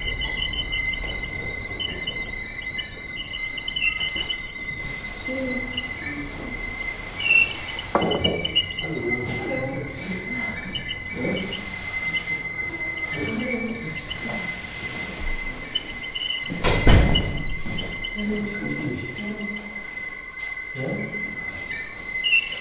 weichselstr, ohrenhoch - passage, by seiji morimoto
01.02.2009 15:45, recording based on a performance by artist seiji morimoto. installation at ohrenhoch, a gallery specialized in sound. people entering the room, talking, microphone close to a little speaker.
Berlin, Deutschland, 1 February 2009